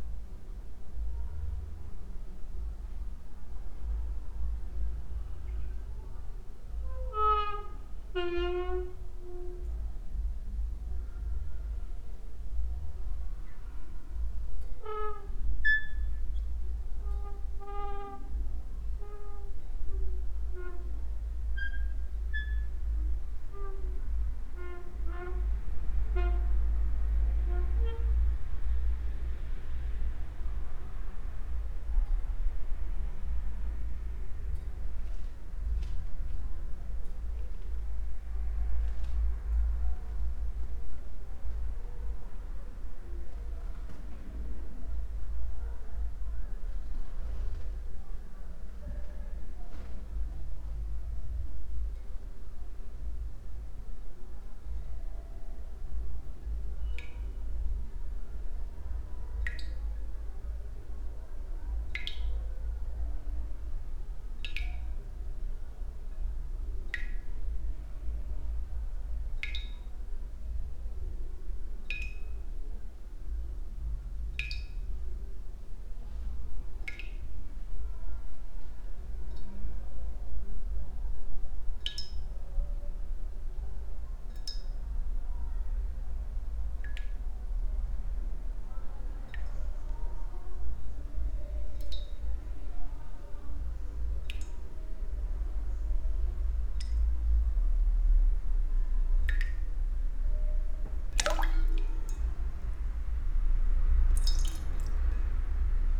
Mladinska, Maribor, Slovenia - late night creaky lullaby for cricket/28
... with drops into porcelain bowl